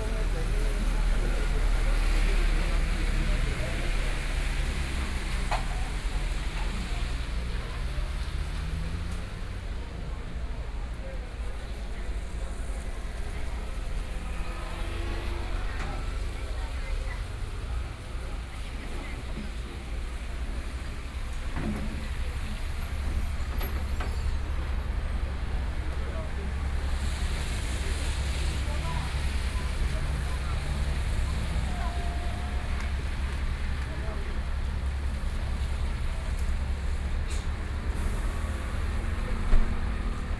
Yugang Rd., Su’ao Township - Selling fish sounds
At intersection, Selling fish sound, rainy day, Zoom H4n+ Soundman OKM II
Yilan County, Taiwan